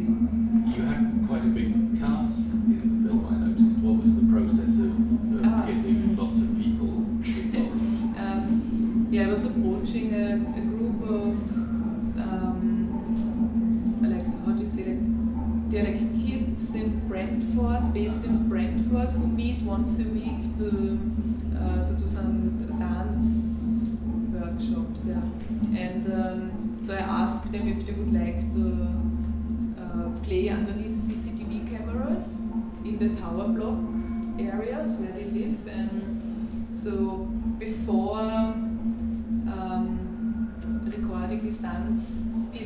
neoscenes: Manu speaking at Digitally Yours
Turku, Finland, April 2007